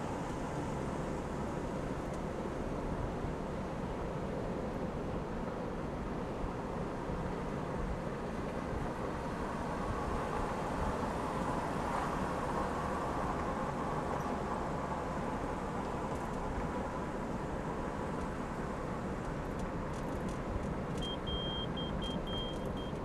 Sherwood Forest - Commute
We do it every day until it seems we can do it in our sleep. Yet propelling 3,200 lbs of steel down the road at 60 mph is hardly something you'd want the people around you to be doing in their sleep.
Of all the soundscapes I've made, this one is a bit of a cheat. I found that a single commute on an average day is very, very boring (and thank goodness for that). The vast majority of cars on the road today are remarkably quiet and nondescript. It is the rare dumptruck or Harley that is even distinguishable, and they're usually hurtling past you in the next lane rather than waiting patiently to be recorded. So this soundscape was assembled from several trips, with windows up and windows down, on the highways and byways, morning and evening commutes. Several hours of raw tape was edited down to just 27 minutes of "highlights."
And I still didn't capture a single good crash.
Major elements:
* Getting into my truck (Mazda B-2200, 1989, red)
* Opening the garage door
Snohomish County, Washington, United States of America, 13 September 1999